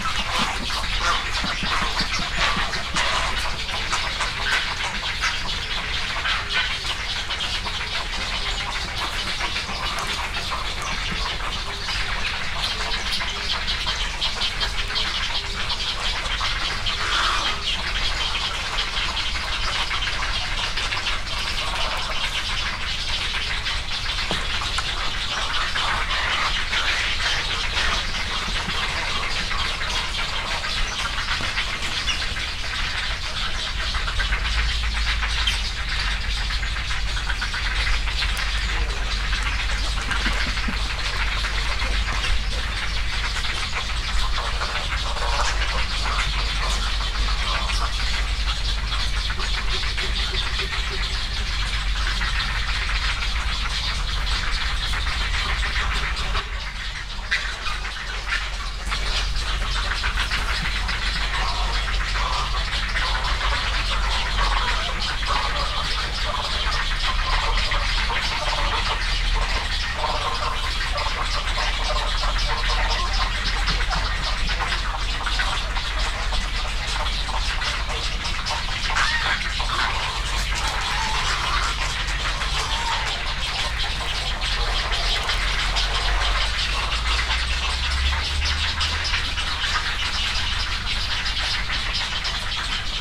Awaji, Yasu-shi, Shiga-ken, Japan - Egret rookery
Great egrets, cattle egrets, and other birds in the woods beside Hyozu Shrine in Yasu City, Japan. Recorded with a Sony PCM-M10 recorder and FEL Communications Clippy Stereo EM172 Microphone tied to a tree.